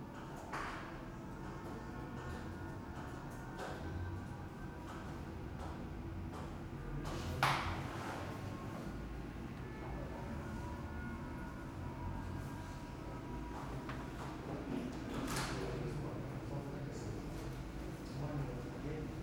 École supérieure d'art d'Aix-en-Provence - stairway ambience